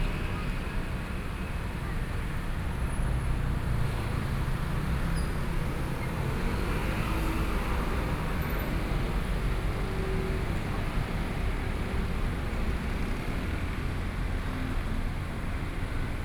Standing on the roadside, Traffic Sound
Binaural recordings